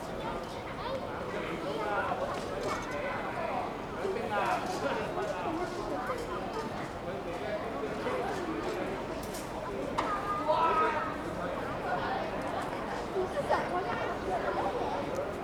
香港天水圍天瑞路天瑞邨羅馬廣場 - 天瑞邨羅馬廣場聲境
天瑞邨羅馬廣場的聲境中, 有人聲, 鳥聲...